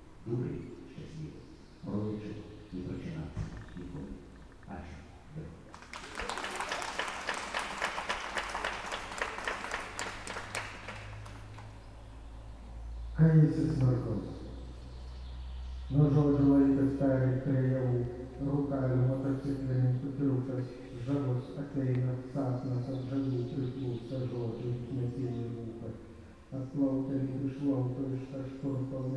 Sudeikiai, Lithuania, poetry readings heard inside the church
poets reading their poetry in the churchyard, I recorded it as heard inside the church